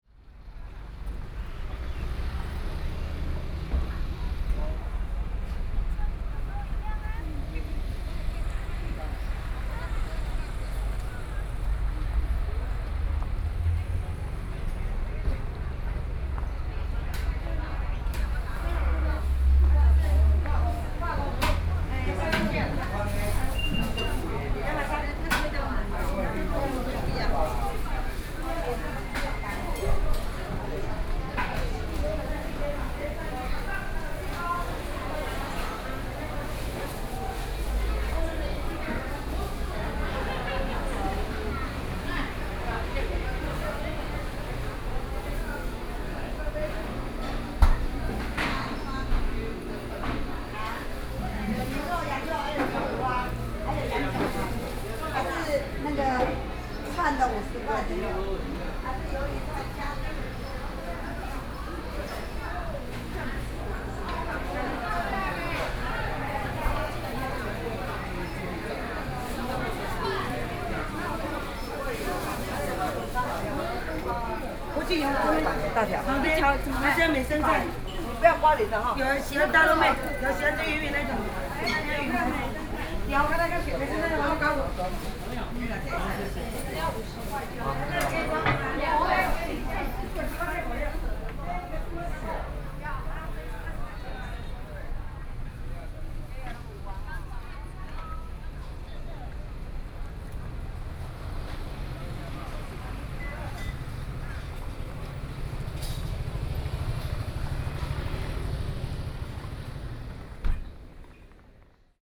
Walking through the market, Traffic Sound
Taitung County, Taiwan